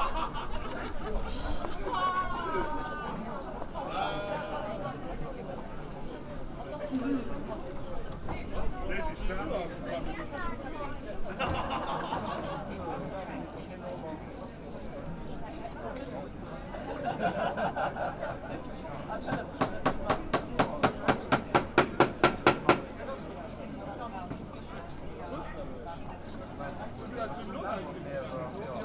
hupperdange, street festival, craftsperson
On the street handcrafted building of bird breeding boxes. In the background people drinking and laughing.
Hupperdange, Straßenfest, Handwerker
Auf der Straße handwerkliches Bauen von Vogelnestern. Im Hintergrund trinken und lachen Menschen.
Aufgenommen von Pierre Obertin während eines Stadtfestes im Juni 2011.
Hupperdange, fête de rue, artisans
Fabrication artisanale de nids d’oiseaux dans la rue. Dans le fond, on entend des gens qui boivent et qui rient.
Enregistré par Pierre Obertin en mai 2011 au cours d’une fête en ville en juin 2011.
Project - Klangraum Our - topographic field recordings, sound objects and social ambiences
Hupperdange, Luxembourg, 2 August 2011